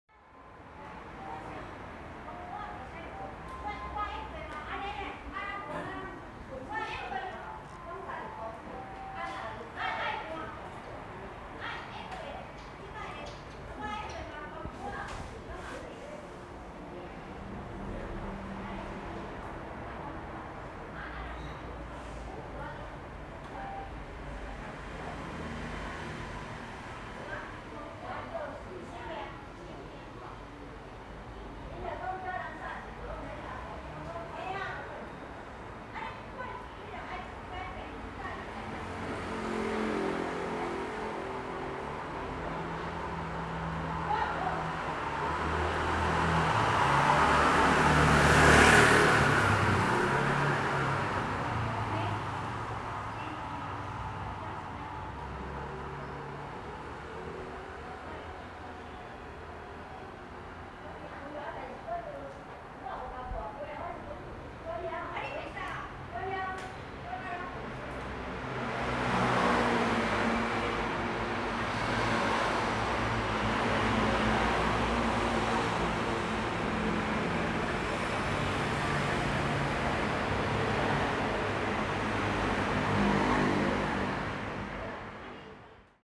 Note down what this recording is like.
Old community, In the alley, Traffic Sound, Sony Hi-MD MZ-RH1 +Sony ECM-MS907